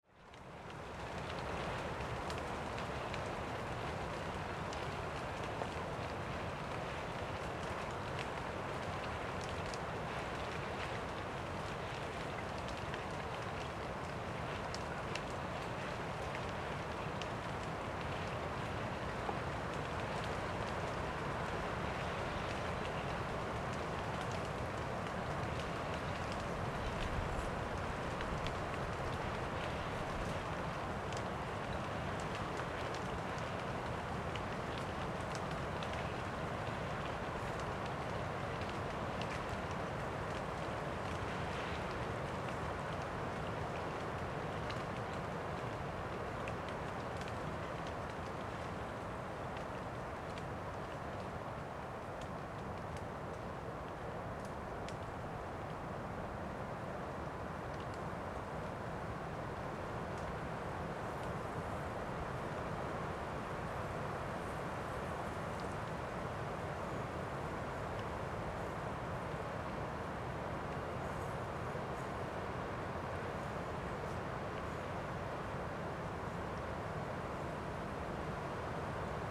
Lithuania, Sudeikiai, reed in wind
wind gusts in reed, just before the spring rain